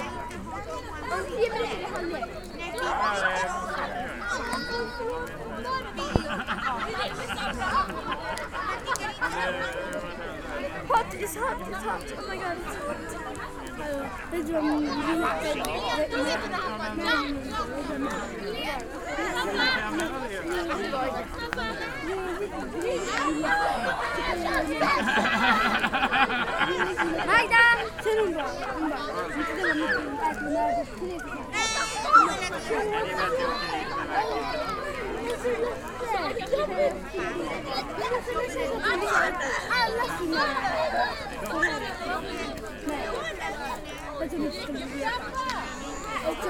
Nuit de Walpurgis, tous autour du feu.
30 April 2013, ~22:00